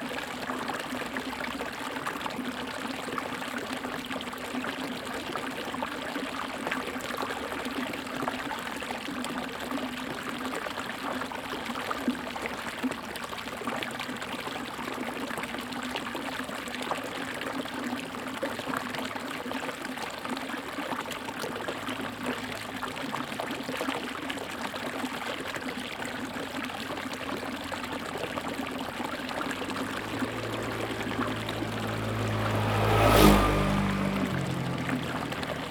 Aqueduct, Flow sound
Zoom H2n MS+XY